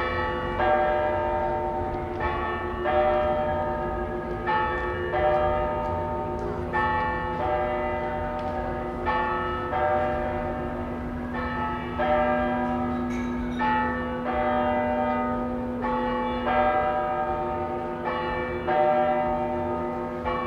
Dekanhuset, Biskopsgatan, Uppsala, Sweden - Friday night in central Uppsala, clock strikes eleven
A night in central Uppsala. The clock tower strikes eleven, cars on gravel, students shouting, bicycles rattling, party music from Värmlands nation in the background. Recorded with Zoom H2n, 2CH stereo mode, deadcat on, held in hand.